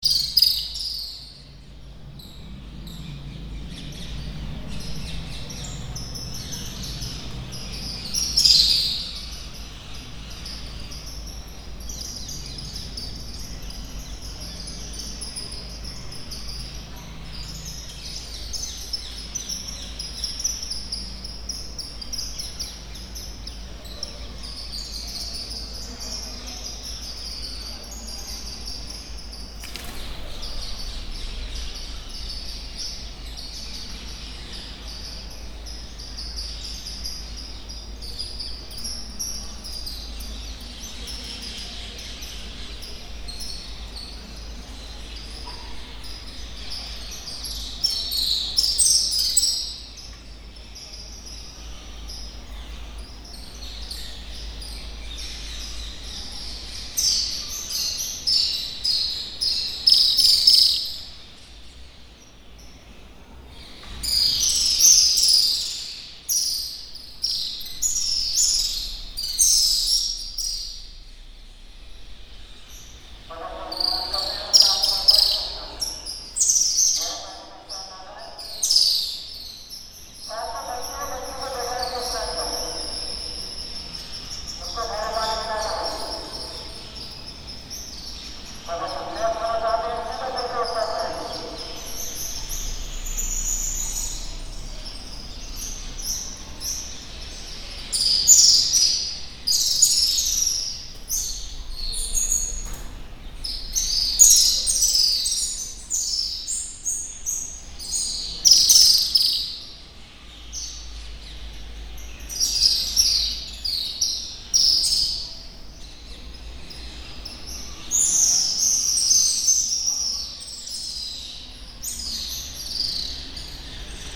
Tikamgarh, Madhya Pradesh, Inde - Birds ballet
Inside the King's cenotaph, one can hear a ballet of birds coming in and out.
Uttar Pradesh, India